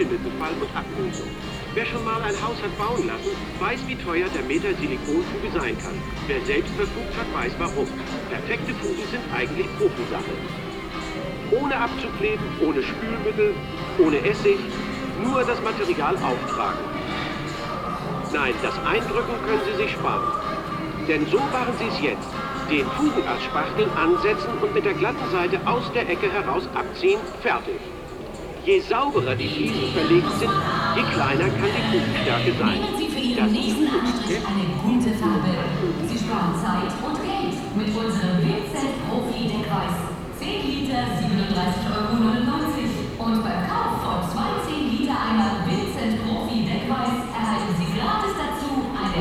Frillendorf, Essen, Deutschland - essen, frillendorf, construction market
In einem Baumarkt. Der Klang verschiedener Werbemonitore und Kunden in den Gängen des Marktes..
Inside a construction market. The sound of different advertising monitors and customers in the corridors of the market.
Projekt - Stadtklang//: Hörorte - topographic field recordings and social ambiences